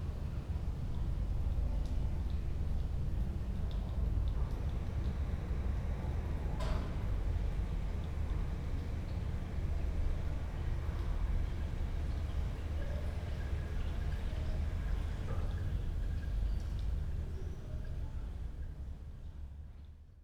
inner yard window, Piazza Cornelia Romana, Trieste, Italy - machine, church bells, spoken words